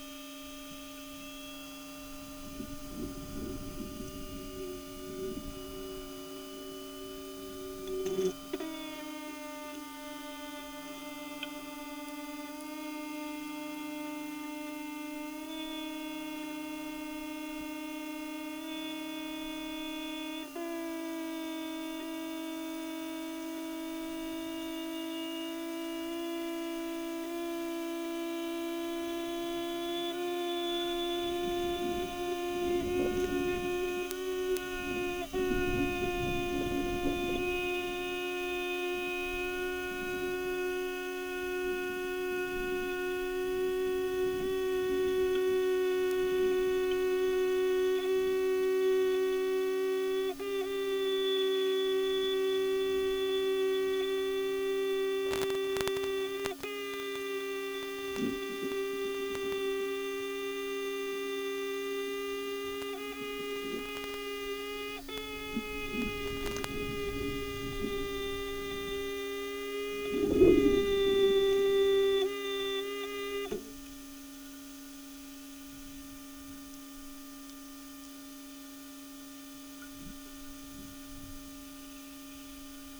Sound inside the rail plus a displaying hoverfly
A contact mic pick up sounds and vibrations inside anything it is attached to - here a rail on a train track. It was an amazing piece of luck that a hoverfly chose the mic to land and perform its mating display (the hum slowly rising in pitch).
9 July, ~09:00